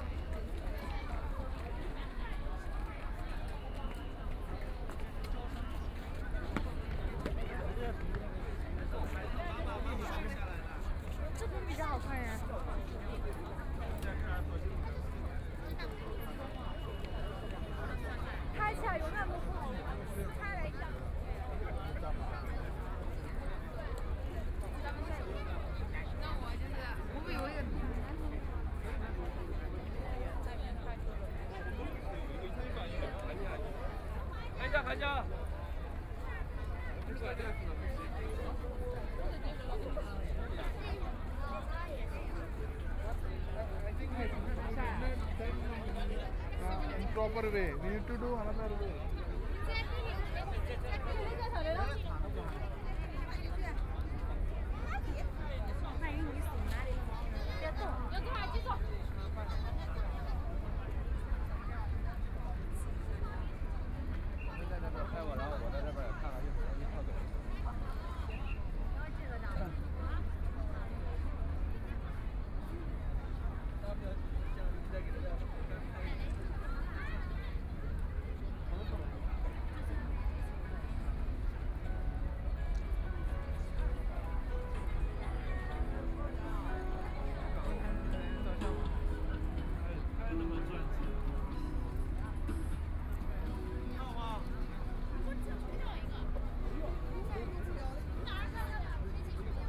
the Bund, Shanghai - Tourist places
Traffic Sound, Many tourists, Traveling by boat on the river, And from the sound of people talking, Binaural recording, Zoom H6+ Soundman OKM II